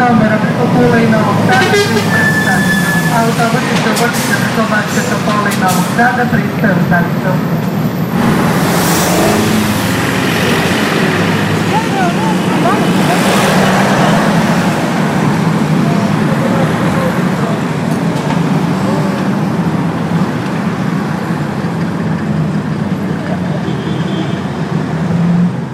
Dolazni peron, autobuska stanica, (Arrival bus station) Belgrade